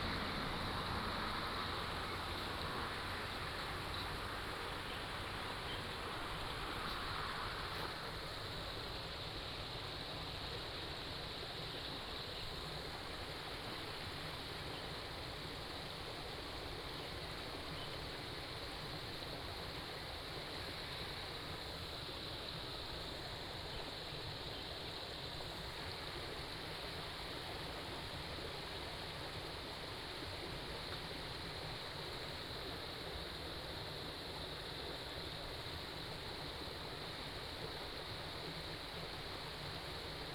樂合里, Yuli Township - In the stream edge
Stream, Crowing sound, Birdsong, Traffic Sound
Hualien County, Taiwan